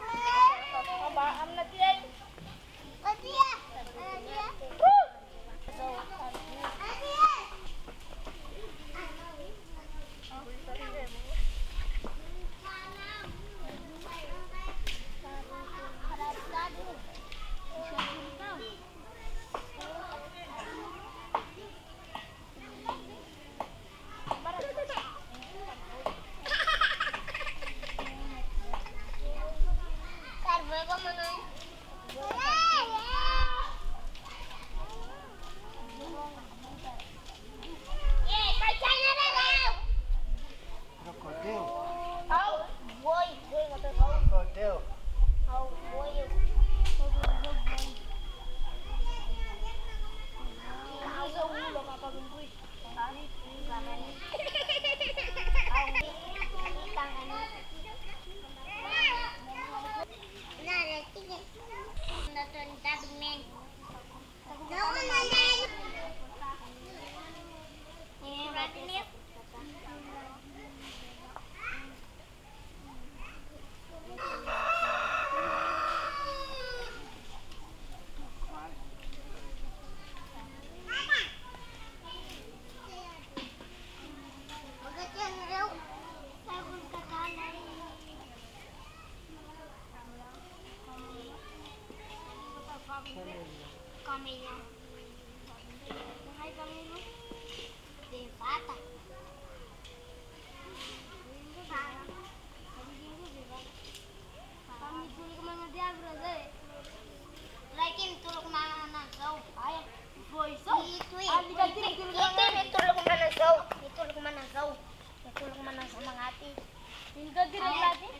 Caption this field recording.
kids playing near Tsingy de Bemaraha Strict Nature Reserve